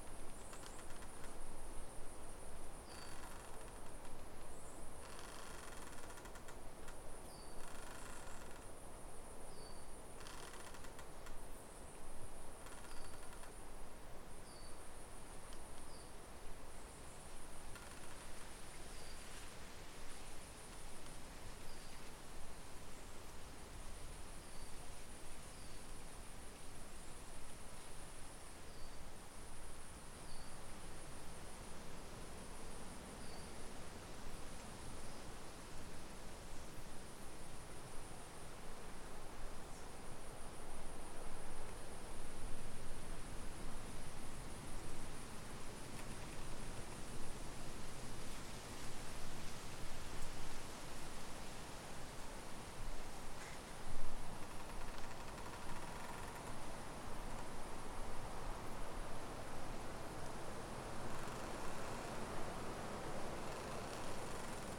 Rue de Beauregard, Saint-Bonnet-le-Chastel, France - Scary field recording
Wind, bells, tree craking, strange noises in the evening in the forest. I had to leave I was so scared.
France métropolitaine, France, 28 August 2021